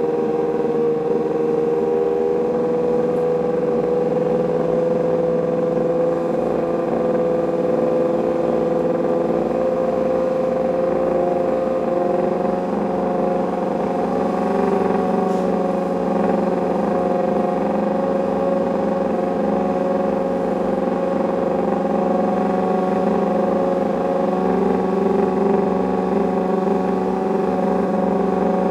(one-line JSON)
{"title": "Silverstone Circuit, Towcester, UK - day of champions 2013 ... pit lane walkabout ...", "date": "2013-08-29 12:55:00", "description": "day of champions ... silverstone ... pit lane walkabout ... rode lavaliers clipped to hat to ls 11 ...", "latitude": "52.08", "longitude": "-1.02", "altitude": "156", "timezone": "Europe/London"}